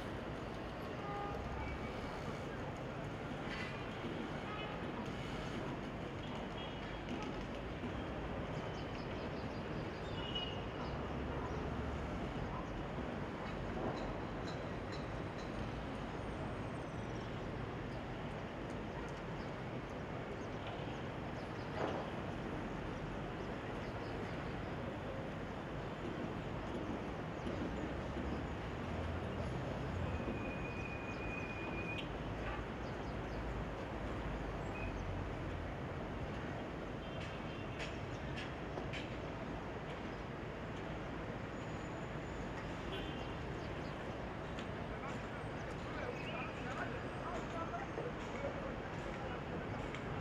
Dakar, Senegal - Stadtambi, Mittag
Aus dem 8. Stockwerk. Im Hintergrund: Muezzin.